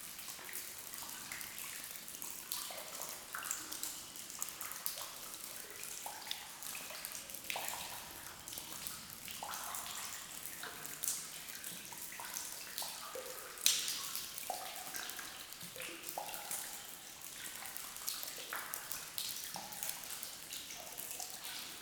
Differdange, Luxembourg - Soft rain
A soft rain in an underground mine. This is a quiet ambience.